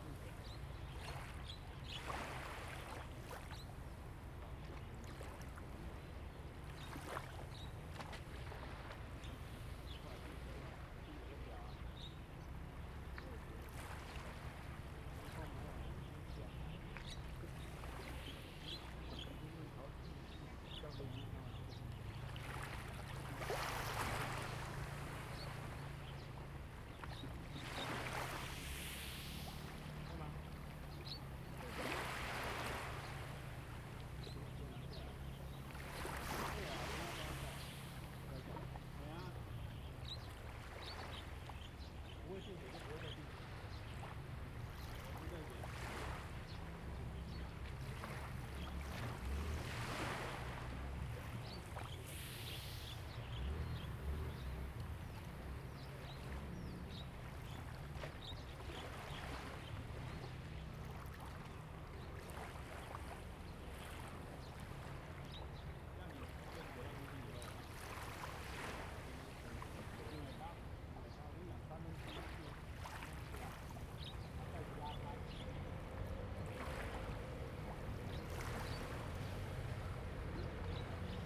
杉福漁港, Liuqiu Township - Small beach
Small beach, Sound of the waves, Diving Exercises
Zoom H2n MS+XY
1 November 2014, 11:43